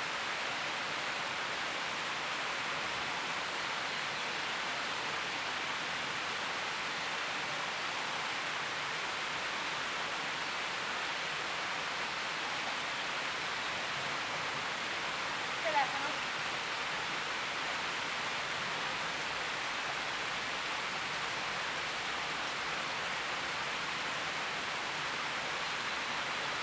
L'Aquila, Fontana delle 99 Canelle - 2017-05-22 06-99 Cannelle
L'Aquila AQ, Italy, 22 May 2017